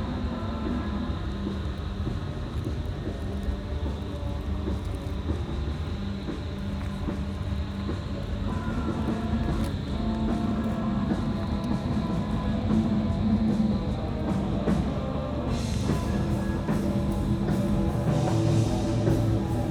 walk through former industrial area, now more and more occupied by artists, musicians, workshops.
6 July 2011, 20:55